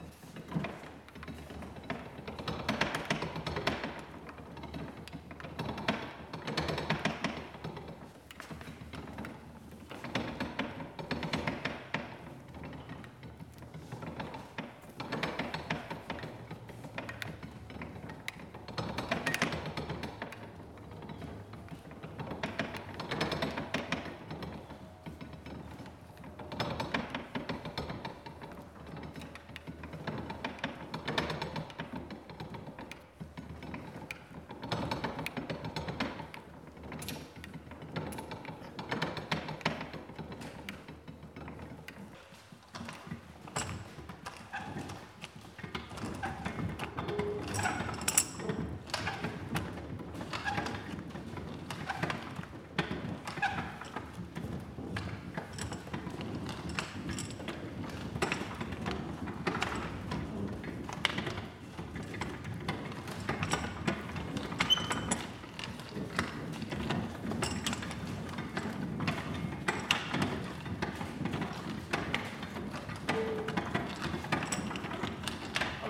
Planetarium-Eise Eisingastraat, Franeker, Nederland - planetarium-the works
Franeker is a very old town that used to have a planetarium. Eise Elsinga was a son of a poor wool woolcomber. Although he was very intelligent, he was not aloud to go to nschool: he had to work. In his free hours he studied mathematics and astronomics. At the age of 15 he published a 650 pages book on mathematics and in 1774-1781 he build a planetarium in his living roomn. It is a wonderfull constructuion of our solar system and very very accurate for many centuries by now.
This is the sound of the heart of the mechanism. The clockwork
Fryslân, Nederland, 26 October 2015, 16:59